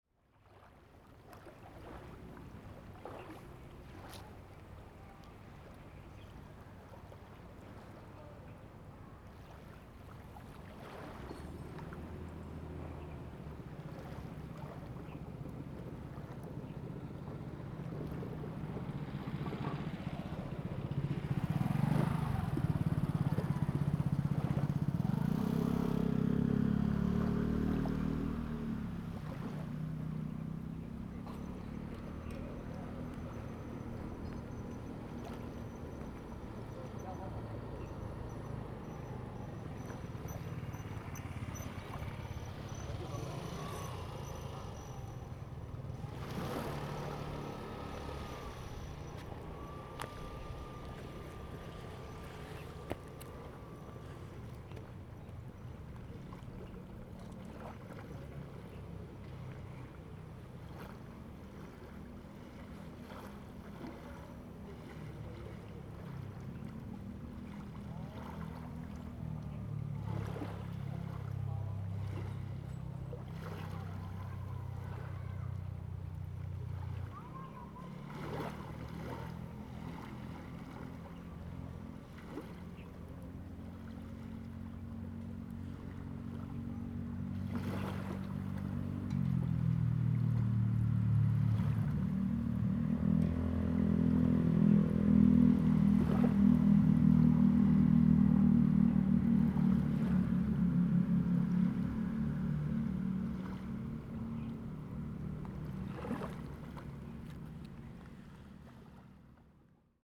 Small fishing port, Birds singing, Sound wave
Zoom H2n MS+XY